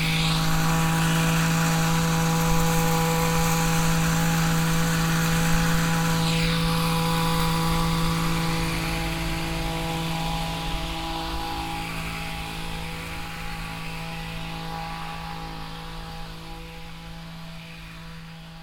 lippstadt, motorized hedge clipper
cutting of an hedge close to the river side in the early afternoon
soundmap nrw - social ambiences and topographic field recordings